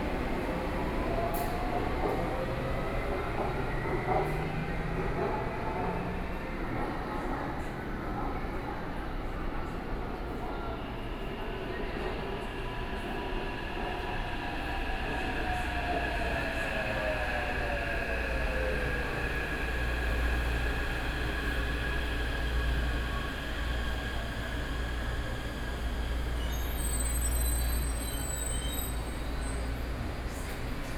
Dingxi Station, New taipei City - MRT platform
MRT platform, Waiting for the train, Sony PCM D50 + Soundman OKM II